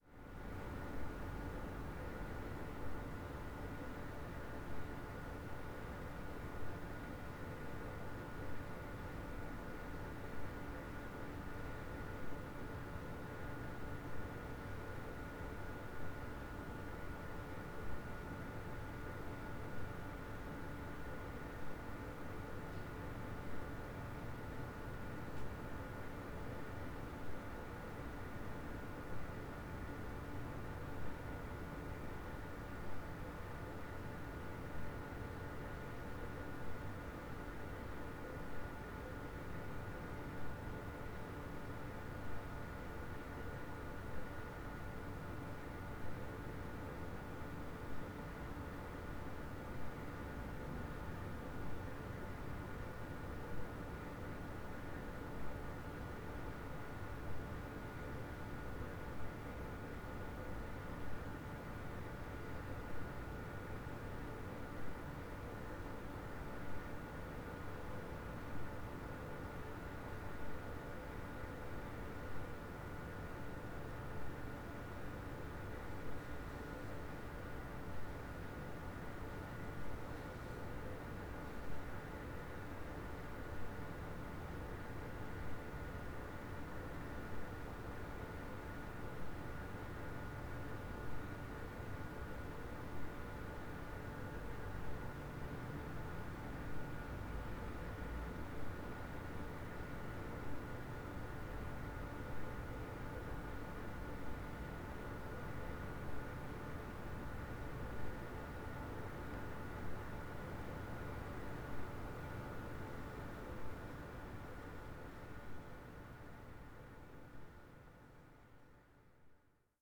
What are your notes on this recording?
water pump of sewer works site at night, the city, the country & me: february 6, 2014